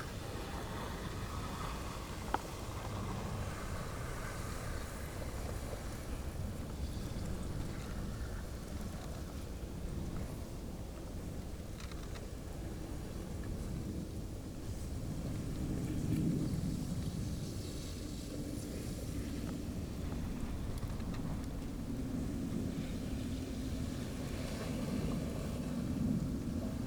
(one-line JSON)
{"title": "hohensaaten/oder: groyne - the city, the country & me: drift ice", "date": "2016-01-04 13:56:00", "description": "oder river freezing over, pieces of ice mutually crushing each other\nthe city, the country & me: january 4, 2016", "latitude": "52.87", "longitude": "14.15", "altitude": "2", "timezone": "Europe/Berlin"}